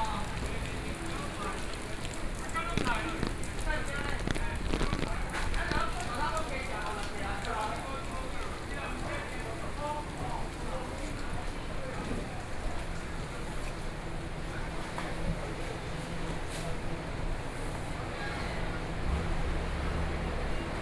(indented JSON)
{
  "title": "Qingtan Rd., Luodong Township - the traditional market",
  "date": "2013-11-07 09:15:00",
  "description": "Rainy Day, The traffic sounds, Walking through the traditional market, From the indoor to the outdoor market markett, Zoom H4n+ Soundman OKM II",
  "latitude": "24.67",
  "longitude": "121.77",
  "altitude": "15",
  "timezone": "Asia/Taipei"
}